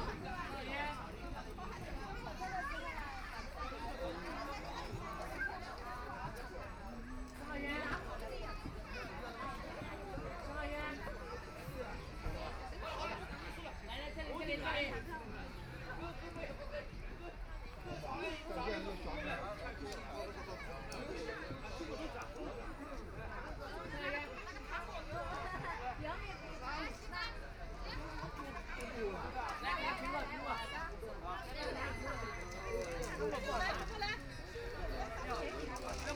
Heping Park, 虹口區 - soundwalk
Walking to and from the crowd, Many sound play area facilities, Train rides, Binaural recording, Zoom H6+ Soundman OKM II
Hongkou, Shanghai, China, 23 November 2013, 11:00